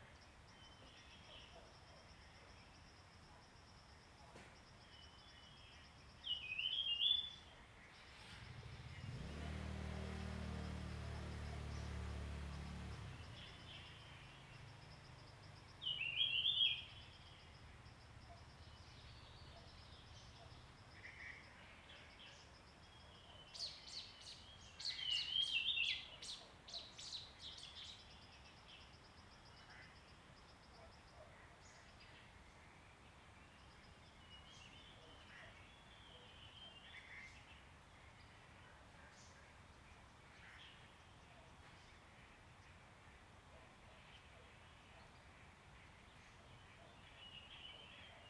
草楠濕地, 埔里鎮桃米里, Nantou County - Bird sounds
Wetlands, Bird sounds
Zoom H2n MS+XY
Nantou County, Taiwan